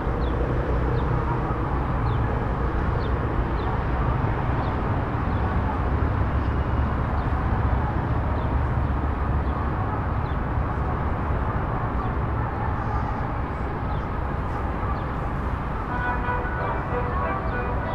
Filopappou Hill, Athens, Grecja - (515) City ambient from Filopappou Hill
City ambient with music from Filopappou Hill in Athens.
recorded with Soundman OKM + Sony D100
posted by Katarzyna Trzeciak